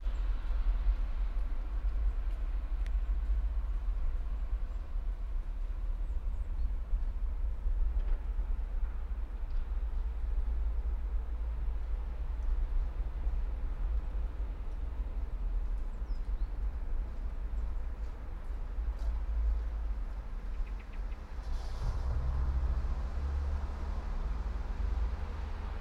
all the mornings of the ... - jan 15 2013 tue
Maribor, Slovenia, 15 January 2013